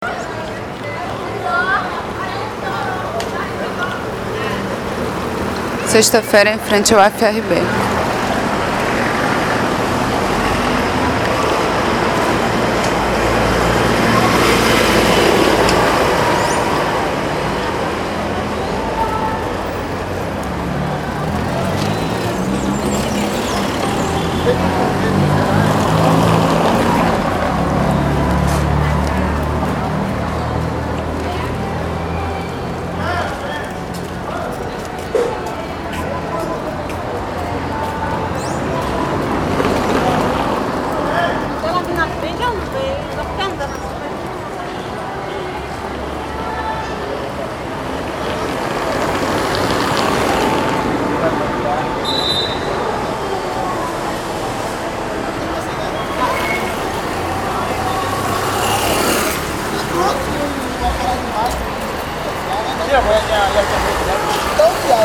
{"title": "Cachoeira, Bahia, Brazil - Em frente a Universidade Federal do Recôncavo da Bahia - UFRB", "date": "2014-03-14 17:21:00", "description": "Sexta-feira, cinco da tarde, fim de aula. Estou em frente a UFRB olhando e ouvindo os transeuntes e veículos passar.\nGravado com um simples Sony ICD PX312.", "latitude": "-12.60", "longitude": "-38.97", "altitude": "11", "timezone": "America/Bahia"}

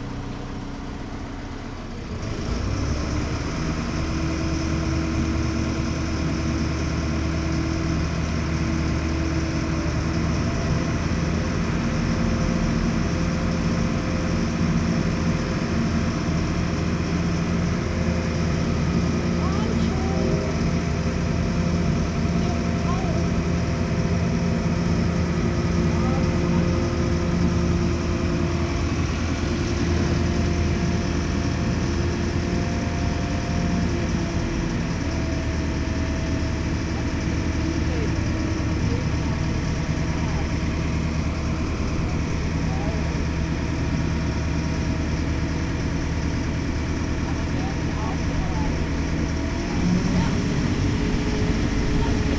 {"title": "kaub: rheinfähre - the city, the country & me: rhine ferry", "date": "2010-10-17 17:10:00", "description": "my ferry captain did the job in 2 minutes 44 seconds ;) greetz to adi w\nthe city, the country & me: october 17, 2010", "latitude": "50.09", "longitude": "7.76", "altitude": "75", "timezone": "Europe/Berlin"}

